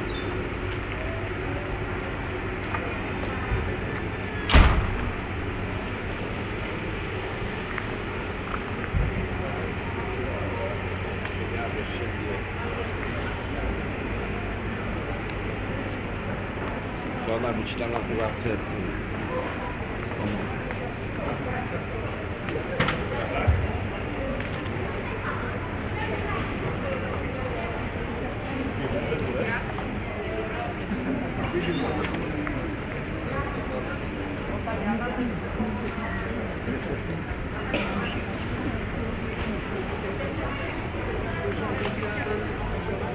Entering the main drinking room, walking around + static ambience for a while.
Recorded with DPA 4560 on Sound Devices MixPre6 II.
powiat nowosądecki, województwo małopolskie, Polska